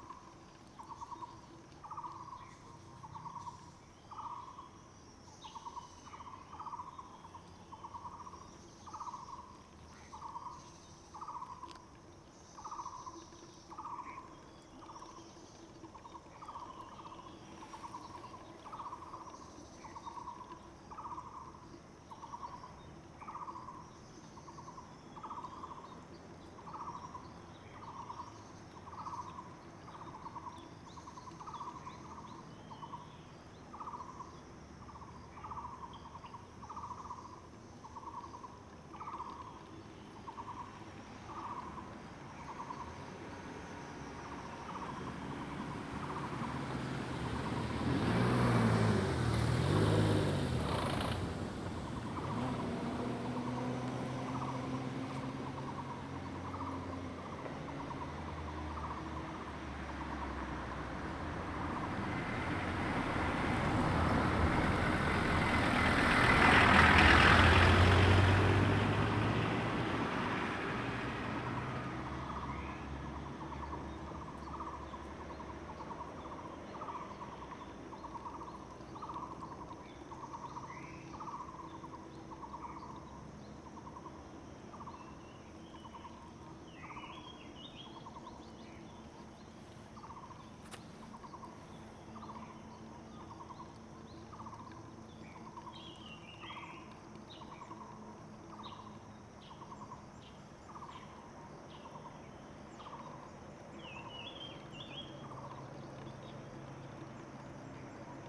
水上巷, 埔里鎮桃米里, Nantou County - Bird and Traffic Sound
Faced with bamboo valley below, Bird sounds, Traffic Sound
Zoom H2n MS+XY